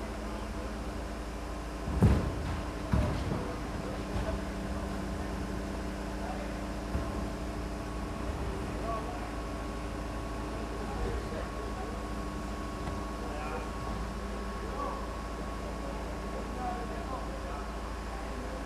C. Canalejas, Sevilla, Spain - Trash Pickup Lorry
Andalucía, España, 7 October 2021